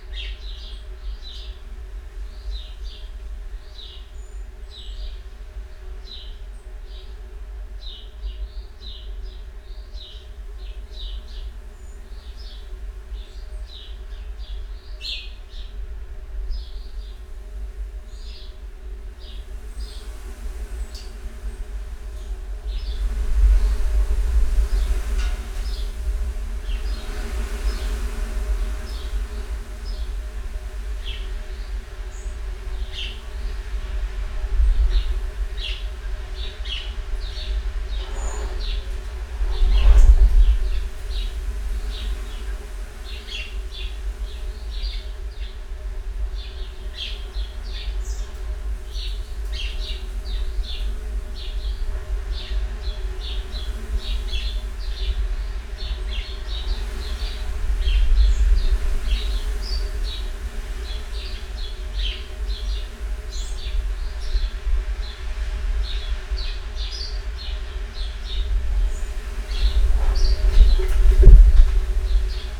Luttons, UK - empty water butt ...
empty water butt ... cleaned out water butt previous day ... gale was approaching so suspended lavalier mics inside ... some bangs and clangs and windblast ... bird song and calls from chaffinch ... house sparrow ... blackbird ... wren ... song thrush ...